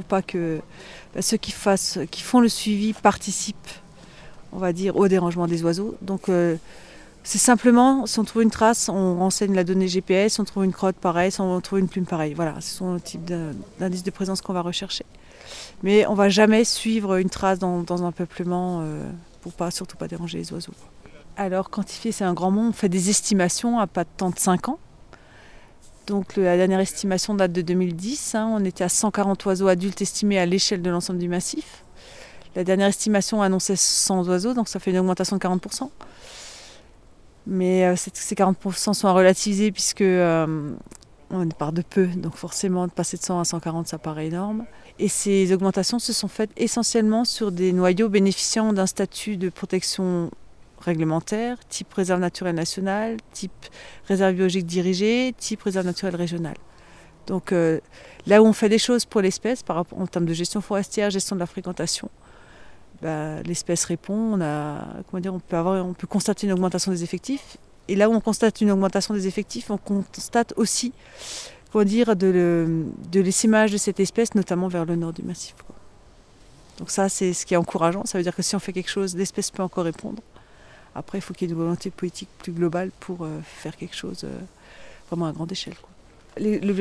Groupe Tétras Vosges - Réserve Naturelle du Massif du Ventron, Cornimont France
Mme Françoise PREISS, chargée de missions scientifiques du Groupe Tétras Vosges.
Le GTV assure le suivi scientifique des populations de tétraonidés et de leurs habitats sur l'ensemble du massif vosgien ( 7 départements et 3 régions).
Une centaine de membres bénévoles participent chaque année au suivi.
Le suivi des populations requiert un bon sens du terrain et une motivation qui soient à même de garantir l'éthique du travail accompli. Pour pouvoir être validés et exploités les résultats doivent être formalisés et des fiches techniques correspondantes ont été mises au point par la commission technique du GTV.
Le massif vosgien est découpé en 10 secteurs pour lesquels un coordinateur local est responsable du bon fonctionnement du dispositif.